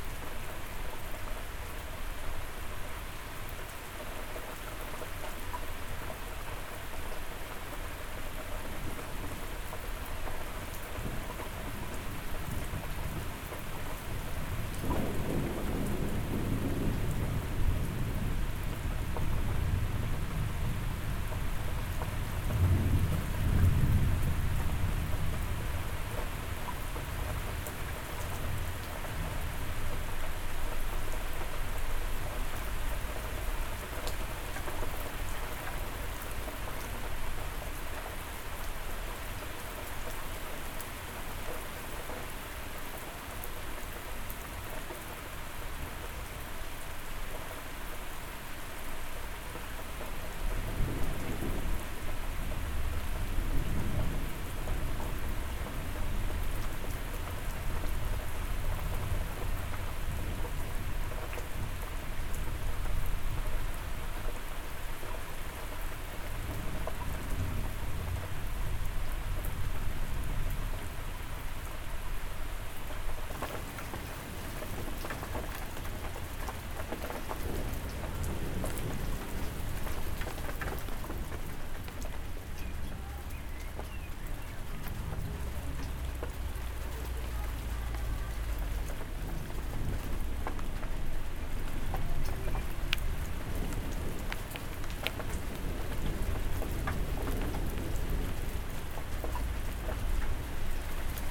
A big threatening storm, on a wet hot evening.
Court-St.-Étienne, Belgium